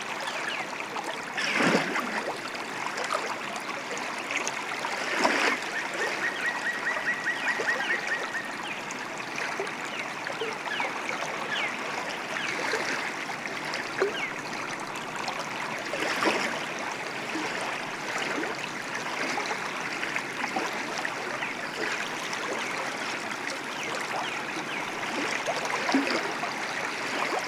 Vyžuonos, Lithuania, river, birds
River flow, black woodoeckers, sunny winter day
Utenos apskritis, Lietuva, 13 February 2022, 15:50